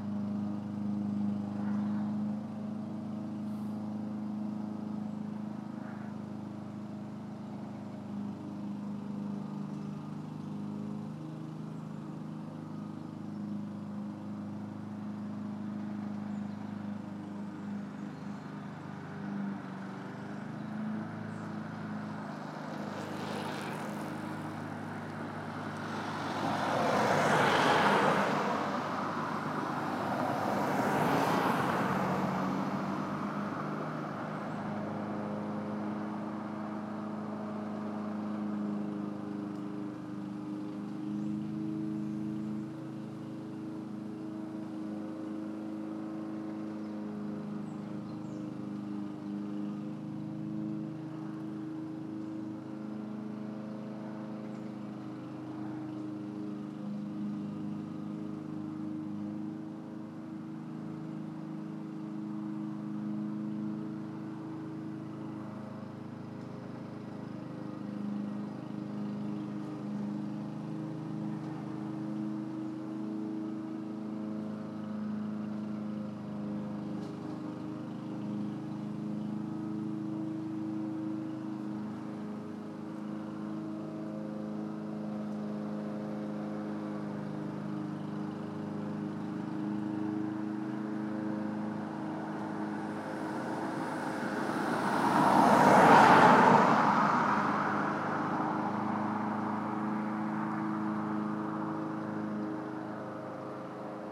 Moss Lane
grass cutting machine, cars, birds, bicycle